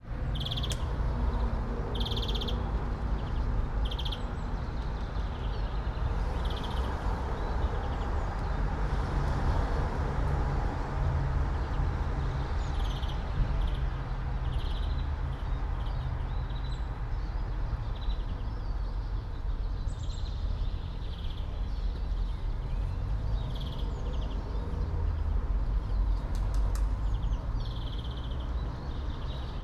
all the mornings of the ... - mar 11 2013 mon
Maribor, Slovenia, 11 March 2013, 08:30